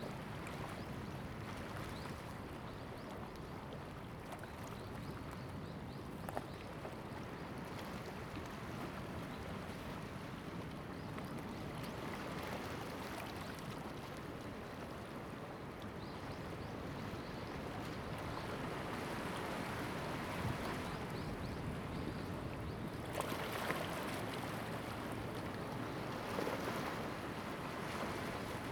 Lüdao Township, Taitung County - sound of the waves
Tide, sound of the waves
Zoom H2n MS +XY
Lyudao Township, Taitung County, Taiwan